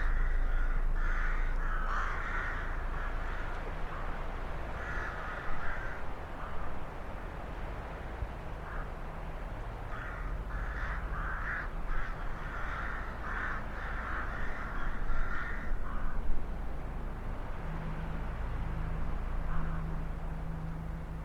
Crows outside Sutton Pontz pump room
14 January 2010, ~11:00